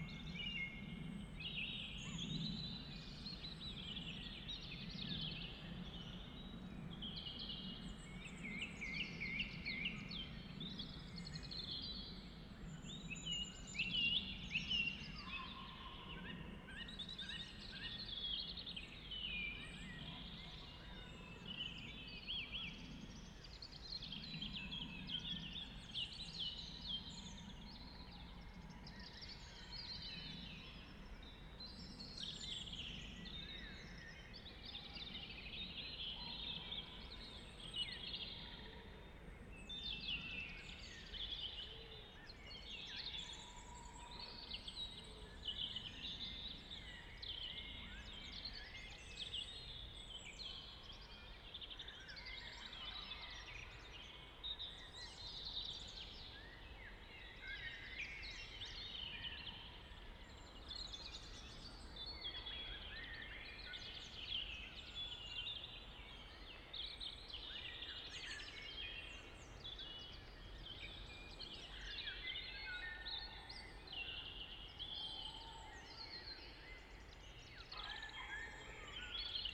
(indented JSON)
{
  "title": "Warburg Nature Reserve, Nr Henley on Thames UK - The Start of the Dawn Chorus and first hour",
  "date": "2018-05-07 04:06:00",
  "description": "We got to the site with a lovely half moon before the chorus had started. There were some Tawny Owls calling, the odd Pheasant, and then the first Robins heralded the beginning. There are Blackbirds, Song Thrushes, Pheasants, Wrens, Chiff-Chaffs, Wood Pigeons, Greater Spotted Woodpecker, Whitethroats, Hedge Sparrows, a Muntjack Deer barking, Great Tits, Carrion Crows, a car arriving, two people talking and laughing, and of course several aircraft. Recorded on a Sony M10 with a spaced pair of Primo EM 172 mic capsules.",
  "latitude": "51.59",
  "longitude": "-0.96",
  "altitude": "104",
  "timezone": "Europe/London"
}